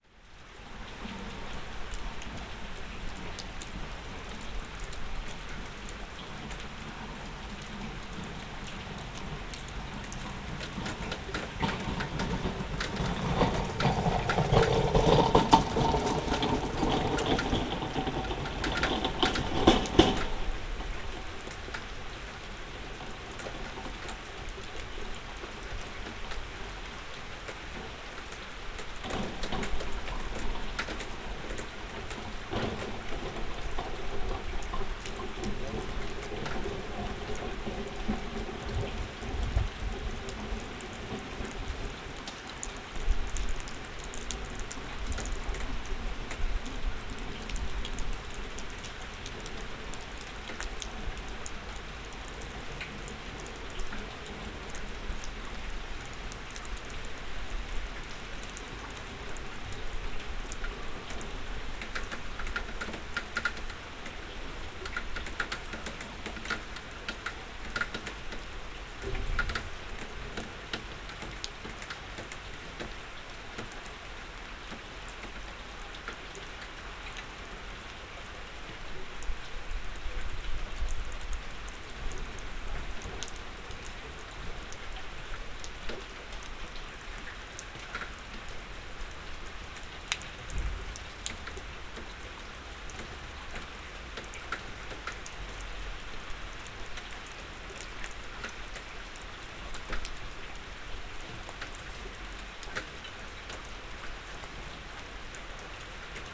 berlin, sanderstraße: vor eckkneipe - the city, the country & me: in front of a pub
under the porch of the pub
the city, the country & me: june 5, 2012
99 facets of rain
Berlin, Germany, 5 June 2012, 03:13